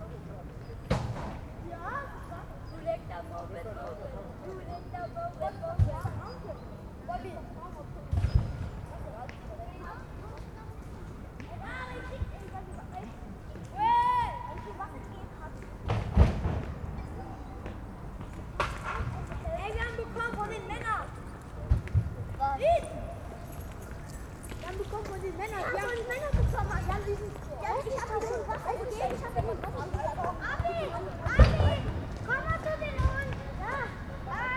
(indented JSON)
{
  "title": "berlin, wildmeisterdamm: gropiushaus, innenhof - the city, the country & me: inner yard of gropiushaus",
  "date": "2011-08-03 19:14:00",
  "description": "playing kids, worker lads logs on a truck\nthe city, the country & me: august 3, 2011",
  "latitude": "52.43",
  "longitude": "13.47",
  "altitude": "54",
  "timezone": "Europe/Berlin"
}